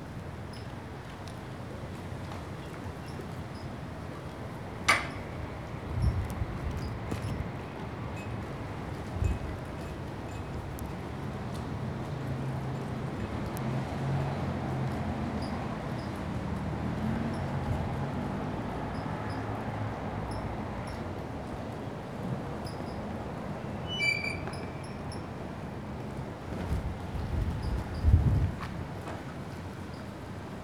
{"title": "Lübeck, Altstadt, broad at Trave river - boats resting", "date": "2013-10-03 11:28:00", "description": "boats and barges attached to a temporary, metal, floating pier. as the boats float on waves - metallic, whining sounds of the barge's broadsides rubbing against the pier. drumming of rigging. city sounds - ambulances, helicopters, traffic. water splashes reverberated over the hotel's architecture.", "latitude": "53.87", "longitude": "10.68", "altitude": "4", "timezone": "Europe/Berlin"}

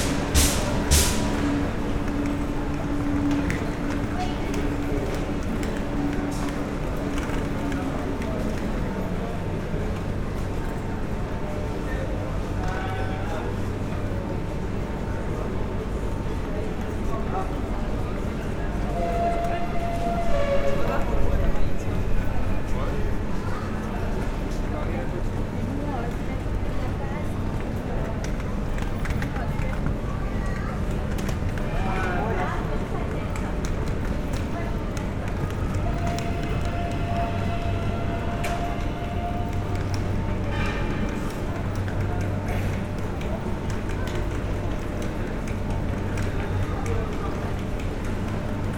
{"title": "Gare Paris Montparnasse - Paris, France - Paris Montparnasse station", "date": "2017-08-03 14:22:00", "description": "The Paris Motparnasse station on a very busy day. It's nearly impossible to hear people talking, as there's a lot of noise coming from the locomotives engines.", "latitude": "48.84", "longitude": "2.32", "altitude": "60", "timezone": "Europe/Paris"}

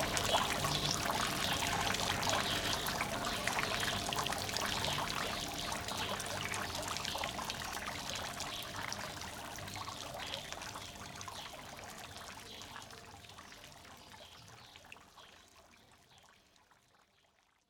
{"title": "La Casa Invisible, Calle Nosquera, Malaga - Patio de La Casa Invisible", "date": "2017-07-18 22:10:00", "description": "Ambiente del patio de la Casa Invisible, Centro Cultura de Gestion Ciudadana", "latitude": "36.72", "longitude": "-4.42", "altitude": "19", "timezone": "Europe/Madrid"}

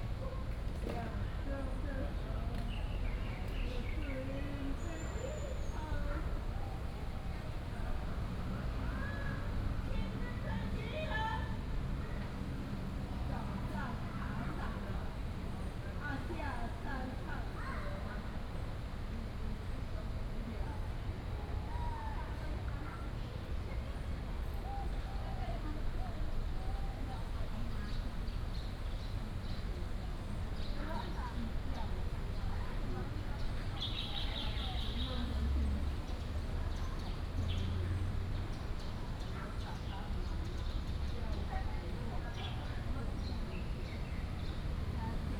{"title": "溫州公園, Taipei City - in the Park", "date": "2015-06-28 16:19:00", "description": "in the Park, Bird calls, Chat", "latitude": "25.02", "longitude": "121.53", "altitude": "20", "timezone": "Asia/Taipei"}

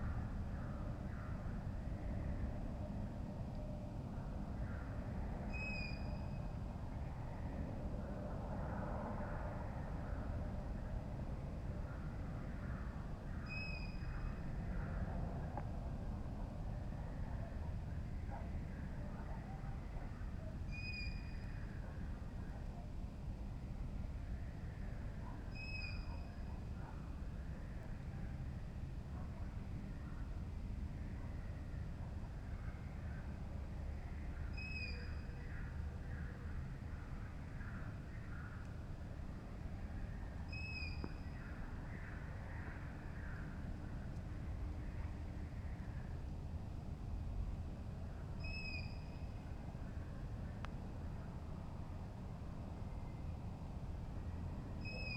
{"title": "Torhout, Belgium, Night on the castle grounds1:30am", "date": "2010-07-13 01:30:00", "description": "Nighttime on the castle grounds.", "latitude": "51.09", "longitude": "3.08", "altitude": "37", "timezone": "Europe/Brussels"}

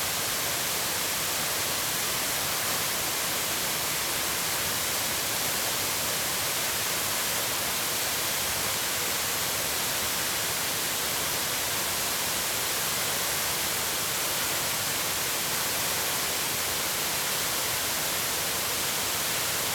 {"title": "鳳凰瀑布, Fenglin Township - Waterfall", "date": "2016-12-14 12:27:00", "description": "Waterfall\nZoom H2n MS+XY +Sptial Audio", "latitude": "23.76", "longitude": "121.42", "altitude": "284", "timezone": "GMT+1"}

